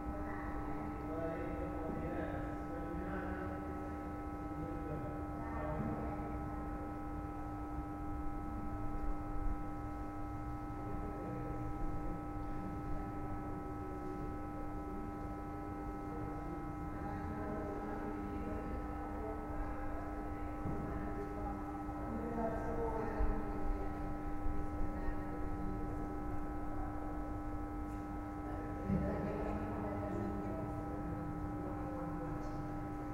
kasinsky: a day in my life
...at the end of the day. Is my birthday...buzz of a streetlight...some passerby...
Ascoli Piceno AP, Italy, 27 May 2010